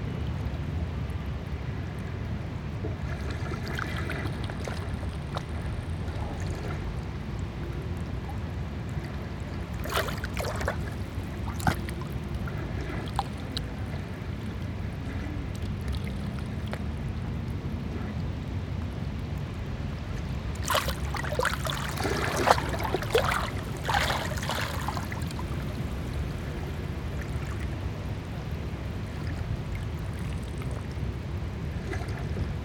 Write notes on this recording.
Recording of waves at the beach. This has been done simultaneously on two pairs of microphones: MKH 8020 and DPA 4560. This one is recorded with a pair of Sennheiser MKH 8020, 17cm AB, on Sound Devices MixPre-6 II.